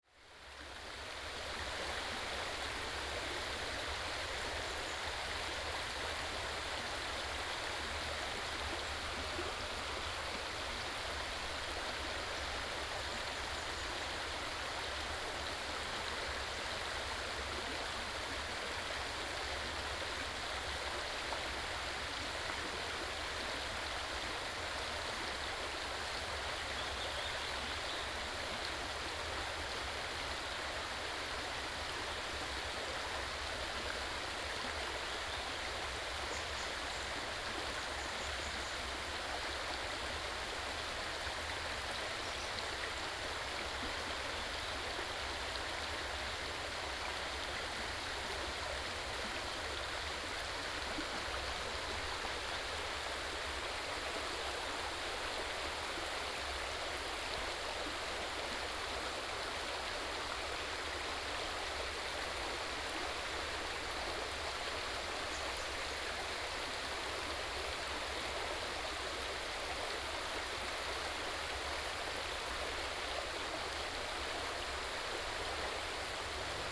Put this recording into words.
The sound of water streams, Birds singing